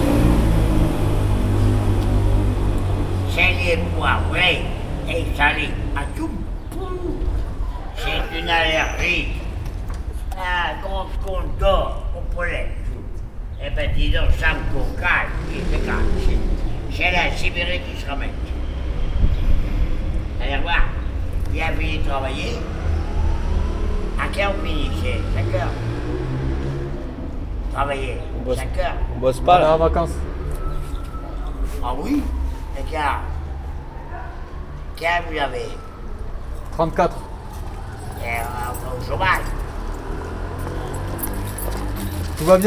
COUCOU LES NAUFRAGES ! papi cambouis - papi cambouis
COUCOU LES NAUFRAGES !
Toulouse, France, 30 May, ~19:00